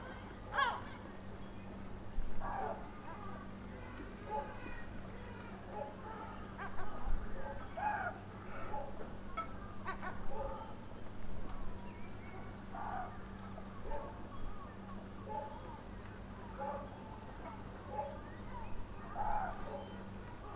from/behind window, Novigrad, Croatia - at dawn, seaside, deers, seagulls, dogs ...
at dawn, nocturnal and day sonic scape merges, celebrating life with full voices ...
15 July 2014, 04:48